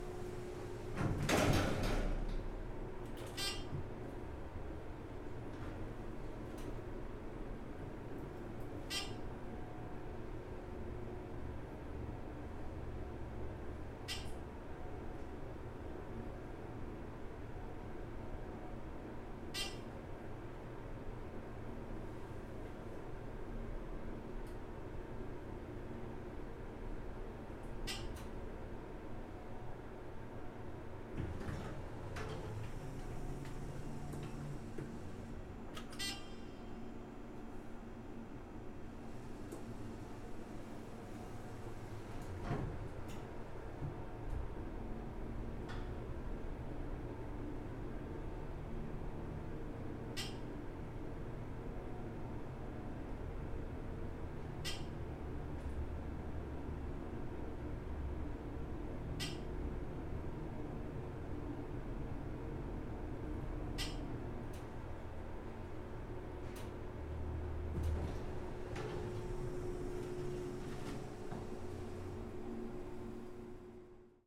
Mississippi Parking Garage Elevator, Lawrence, Kansas, USA - MS Parking Garage Elevator
Recording of trip on elevator in Mississippi Parking Garage